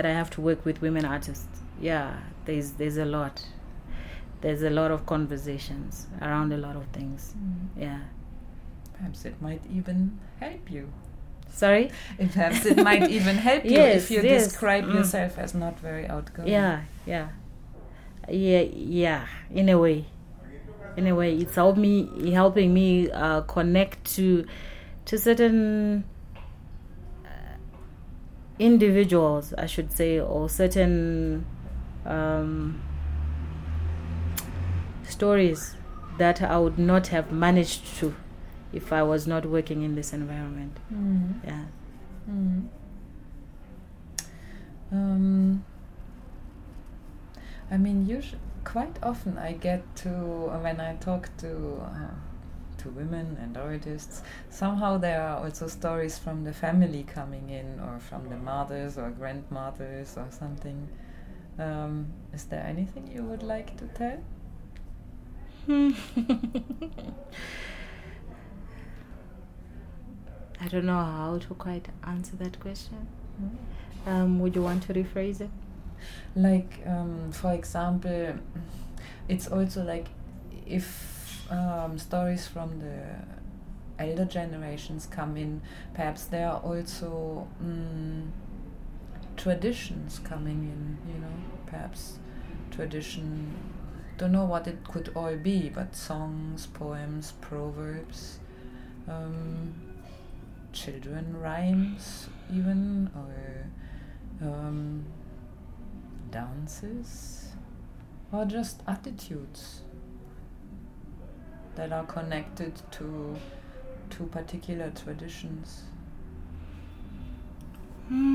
Harare South, Harare, Zimbabwe - Batsirai Chigama - inspiration could be a word...
We are with the poet Batsirai Chigama in the Book Café Harare where she works as a gender officer and project coordinator for the FLAME project. It’s late afternoon, and you’ll hear the muffled sounds of the rush-hour city, and people’s voices roaming through Book Café...What has been Batsi’s way into writing and performing, and how does she see her role as a women and poet in her country? Is the city an inspiration in her work…? In this part of our conversation, Batsi takes us to the very beginnings of her career as a performance poet….
The complete interview with Batsi is archived here:
29 August 2012, 17:07